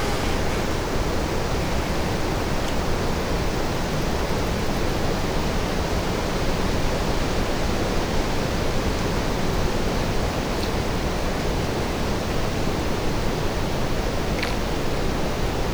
Trees making sounds at night, Taavi Tulev